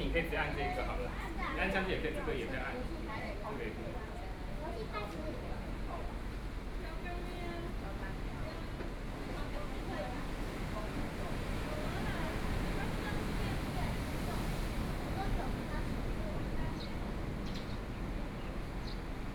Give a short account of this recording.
Next to the fishing port, Tourist, On the coast, Sound of the waves, Very hot weather, Sony PCM D50+ Soundman OKM II